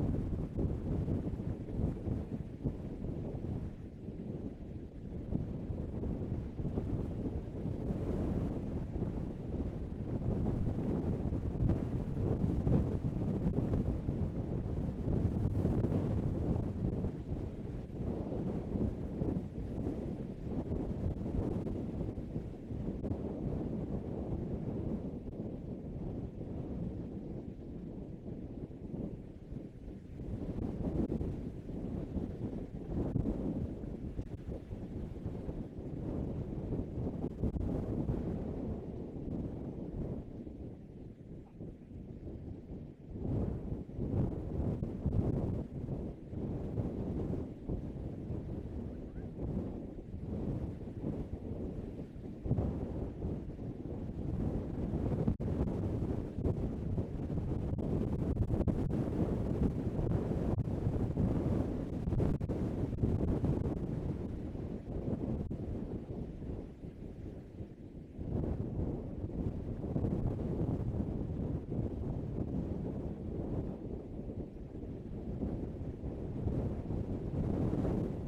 Tai Mo Shan is the highest point in Hong Kong with 957m height, having a sharp peak and steep cliffs around. The mountain has resistant to weathering and erosion as it is formed of volcanic rocks. You can hear nothing but wind on the cloudy windy day.
大帽山957米，是香港最高的山，山形尖錐，四面陡峭，屬火成巖地質，具有一定抗風化侵蝕能力。大霧大風之日，除了風聲甚麼也聽不到。
#Heavy wind, #Wind
香港 Hong Kong, China 中国, 20 October 2018, ~13:00